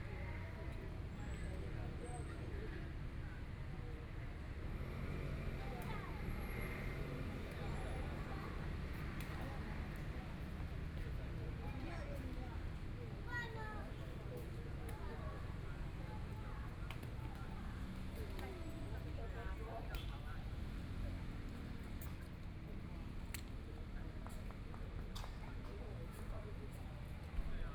SiPing Park, Taipei City - in the park
Afternoon sitting in the park, Traffic Sound, Sunny weather, Community-based park, Elderly chatting, Playing badminton
Binaural recordings, Please turn up the volume a little
Zoom H4n+ Soundman OKM II
Taipei City, Taiwan, 17 February 2014